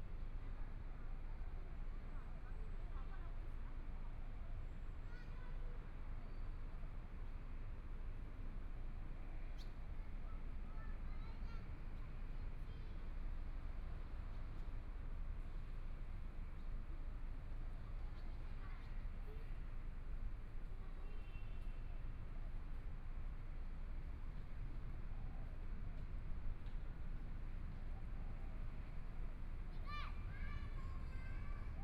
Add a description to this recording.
in the Park, Mother and child, Traffic Sound, Motorcycle Sound, Birds singing, Binaural recordings, Zoom H4n+ Soundman OKM II